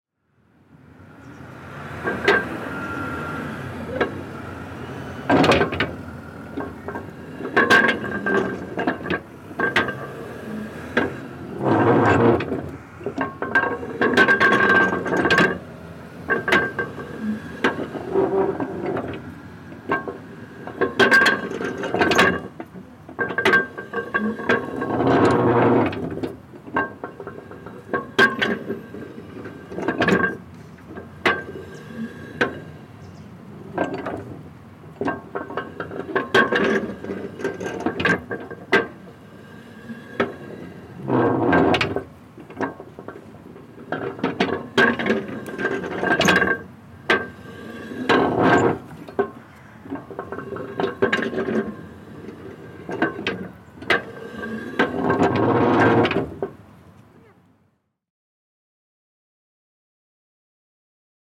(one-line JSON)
{"title": "Nida, Lithuania - Near a Antique Water Pump", "date": "2016-07-30 17:43:00", "description": "Recordist: Raimonda Diskaitė\nDescription: Interacting with the antique water pump exhibit. Mechanical, harsh sounds. Recorded with ZOOM H2N Handy Recorder.", "latitude": "55.30", "longitude": "21.00", "altitude": "4", "timezone": "Europe/Vilnius"}